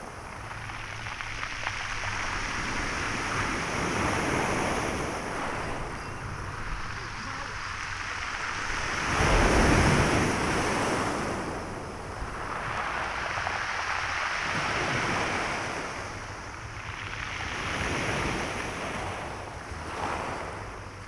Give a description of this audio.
Binaural recording of waves on a pebbly beach. Binaural recording made with DPA 4560 on a Tascam DR 100 MK III.